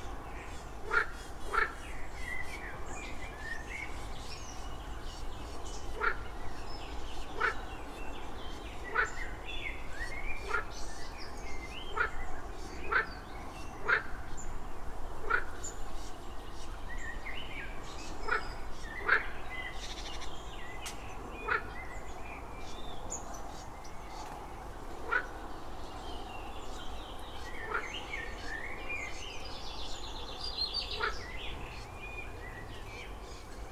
Rte de L’Ia, Motz, France - oiseaux et grenouilles
Base de loisir de Motz chants d'oiseaux coassements de grenouilles il y en a même une qui bondit sur la vase, quelques passages de voitures et d'un train en direction de Seyssel.
Auvergne-Rhône-Alpes, France métropolitaine, France, 16 June 2022